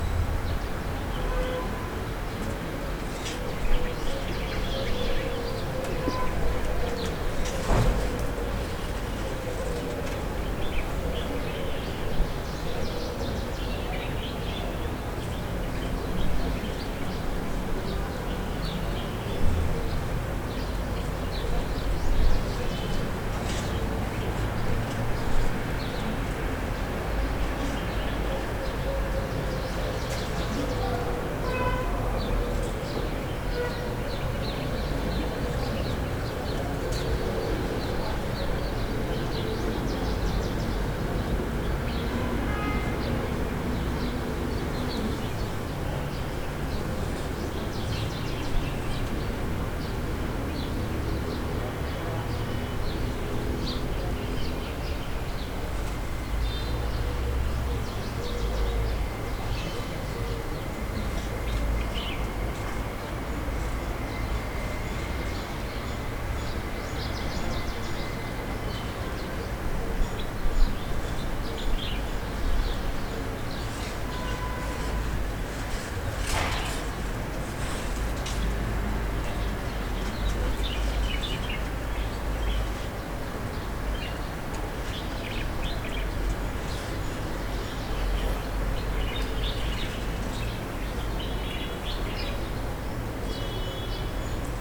Arset Aouzal Rd, Marrakesh, Morocco - Riad Helen
Et les oiseaux, et les voitures, de la terrasse.
12 March 2018, 10:09